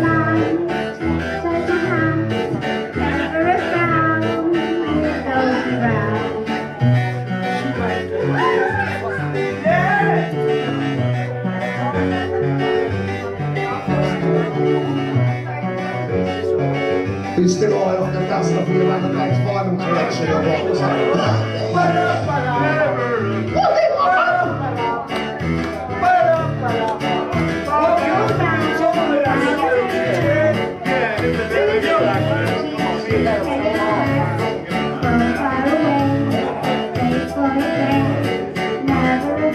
London, Holloway Road, pub, karaoke, recorded with Nokia E72
Greater London, UK, 2 June 2011